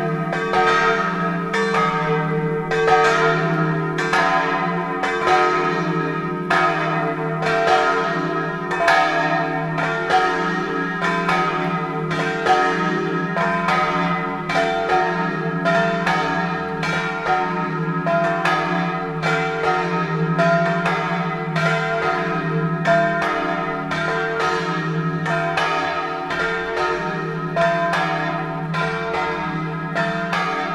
poffabro - dietro al campanile mezzogiorno
campane di poffabro con la pioggia a ottobre (ROMANSOUND) edirol
Frisanco Pordenone, Italy